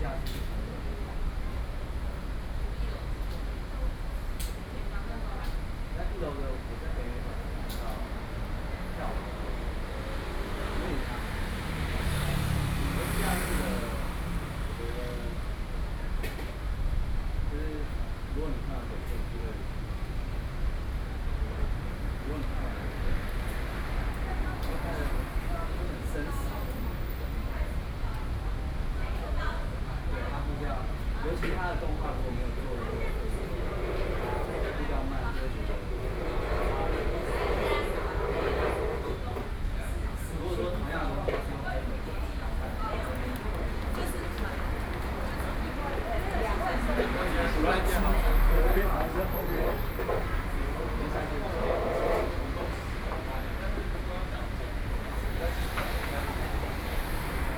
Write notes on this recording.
Standing on the roadside, Sony PCM D50 + Soundman OKM II